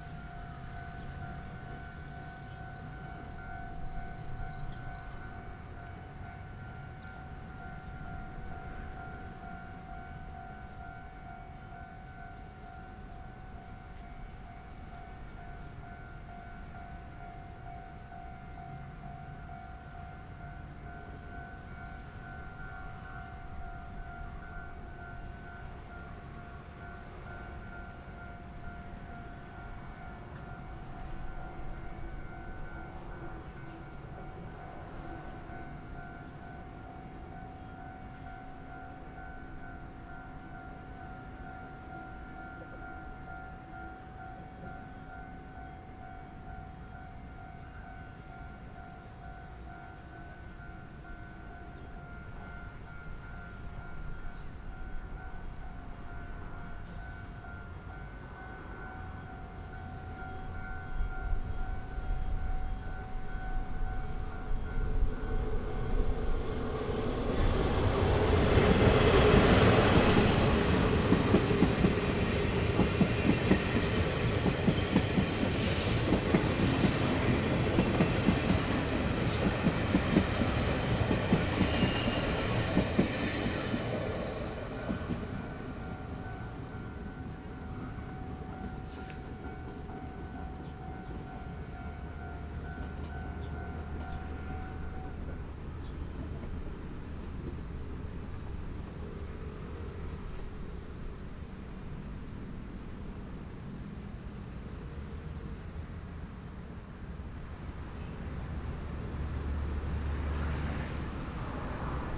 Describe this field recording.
Near the railroad tracks, Train traveling through, Zoom H6 +Rode NT4